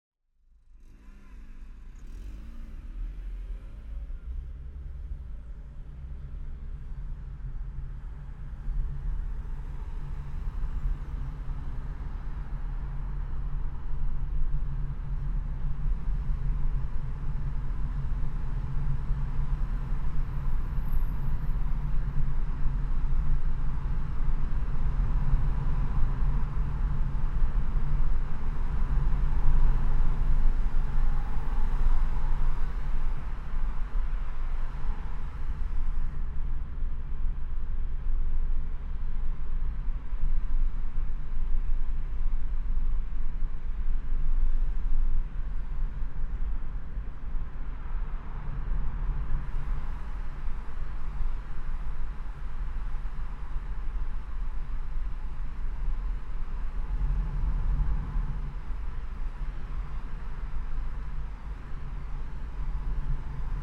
Driving along the IDR, Reading, UK - Driving along the IDR and passing under the really noisy bridge
This is the sound of driving around the Internal Distribution Road in Reading. I know traffic sounds are generally frowned upon, but since they are such a regular feature of daily life I often wonder what can be gained by analysing and documenting them? At 01:25 you hear a specially echoey resonance; this is the sound I think of passing underneath the railway bridge. It's thrillingly loud under there and as a pedestrian of the city, the only way I can deal with it is to reframe it as a permanent noise installation created by some Futurist machine enthusiast. In fact re-imagining it as this means I enjoy the sudden burst of noise when I pass beneath it, because it is a reminder that with imagination I can change how I feel about any sound.
21 March 2014